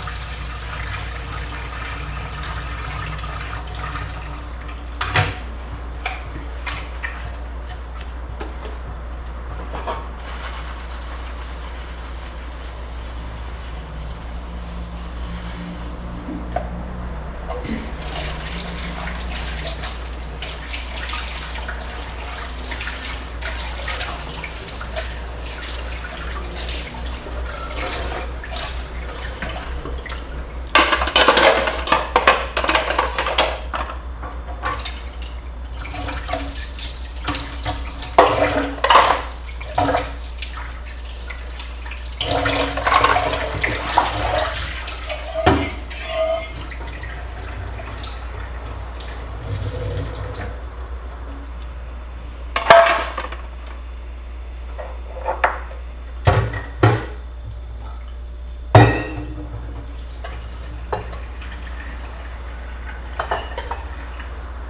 Preparing breakfast. House of 9 women.
Preparando café da manhã. Na casa das 9 mulheres.
- São Domingos, Niterói - Rio de Janeiro, Brazil, 5 November